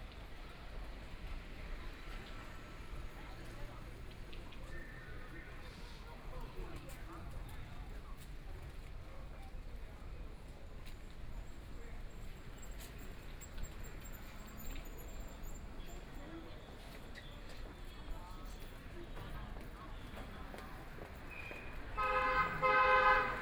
Fuxing Road, Shanghai - Walking across the different streets
Walking across the different streets, Walking on the street, Traffic Sound, Binaural recording, Zoom H6+ Soundman OKM II
Xuhui, Shanghai, China, 3 December 2013